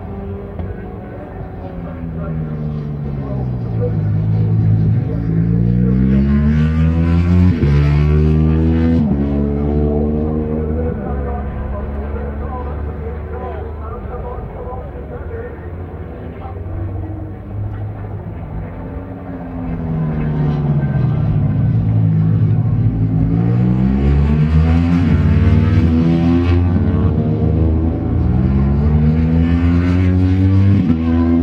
Unit 3 Within Snetterton Circuit, W Harling Rd, Norwich, United Kingdom - BSB 2001 ... Superbikes ... Race 2 ...
BSB 2001 ... Superbikes ... Race 2 ... one point stereo mic to minidisk ... commentary ...